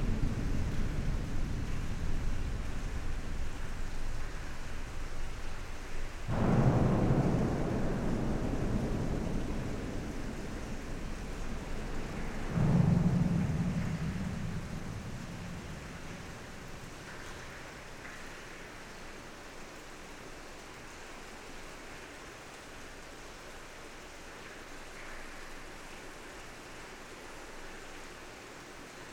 Dinant, Belgium - Charlemagne bridge
Recording of the Charlemagne bridge from the inside. Reverb is very huge because of the long metallic caisson, where I walk. A bridge is not filled with concrete, its entierely empty.
29 September, ~10:00